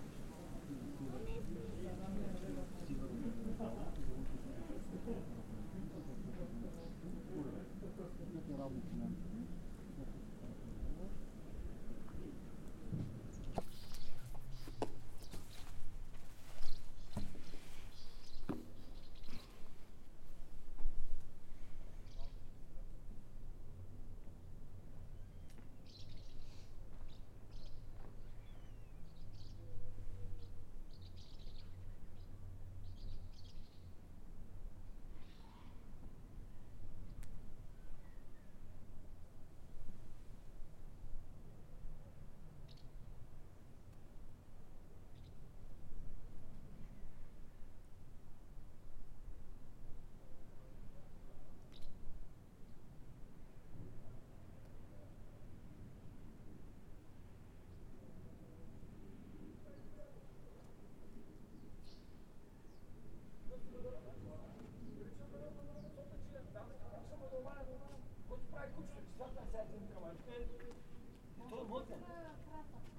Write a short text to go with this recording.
On the windy top of the mountain, where the socialist party of Bulgaria let built Buzludzha, there is a quiet place on the back of the building, where the echoes of the voices of the visitors, that are passing by, are caught.